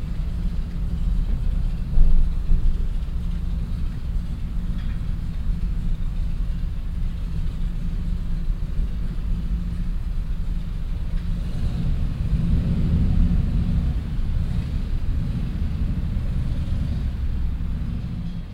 Düsseldorf, Hofgarten, Kö Passage
Mittags in der Kö Passage, Stein Beton hallende Schritte, passierende Fahrradfahrer, das Rauschen des darüber hinwegrollenden Verkehrs.
soundmap nrw: topographic field recordings & social ambiences